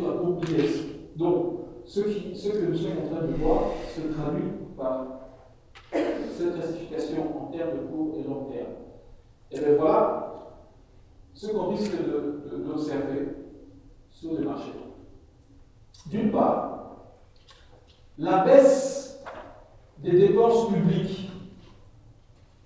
A course of economy, in the Agora auditoire.
Ottignies-Louvain-la-Neuve, Belgium